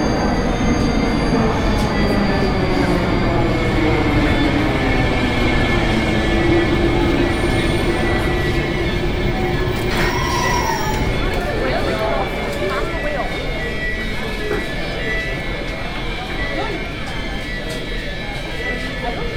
Les Halles, Paris, France - Chatelet - Les Halles RER station, Waiting RER A
France, Paris, Chatelet - Les Halles, RER station, RER A, train, binaural